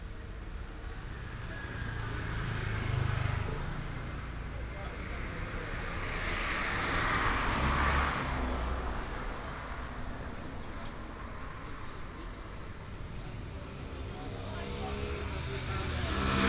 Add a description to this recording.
Bus station next to the home, Being barbecued, Traffic sound, Binaural recordings, Sony PCM D100+ Soundman OKM II